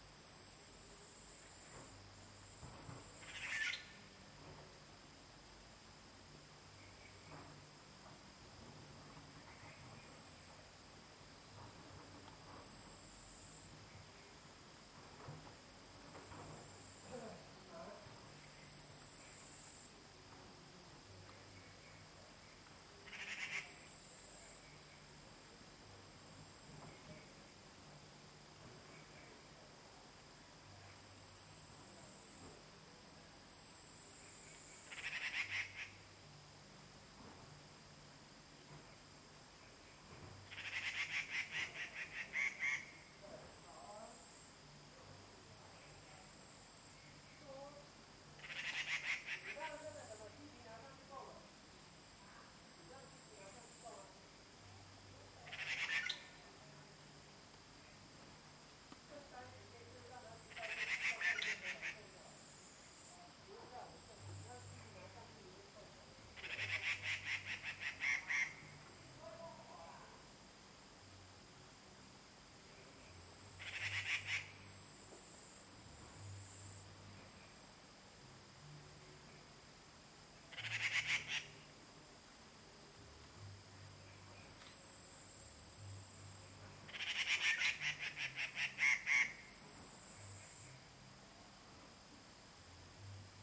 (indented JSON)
{
  "title": "545台灣南投縣埔里鎮成功里種瓜路113號 - 藏機閣的第一聲",
  "date": "2015-09-16 00:49:00",
  "description": "Dendrocitta formosae, Birds singing in the ground.",
  "latitude": "23.95",
  "longitude": "120.89",
  "altitude": "548",
  "timezone": "Asia/Taipei"
}